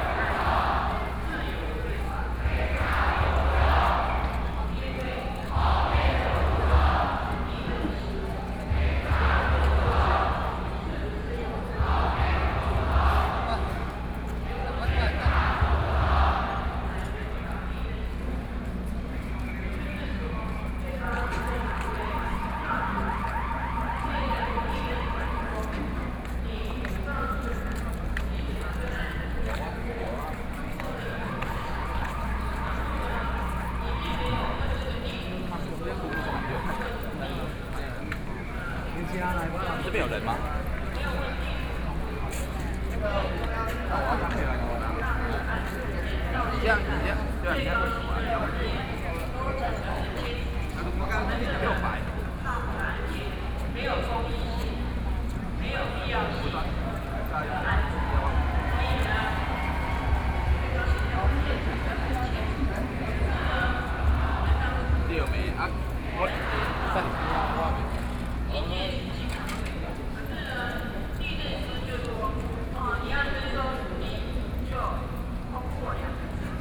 Ministry of the Interior, Taipei City - Nonviolent occupation
Nonviolent occupation, Zoom H4n+ Soundman OKM II
18 August 2013, 22:28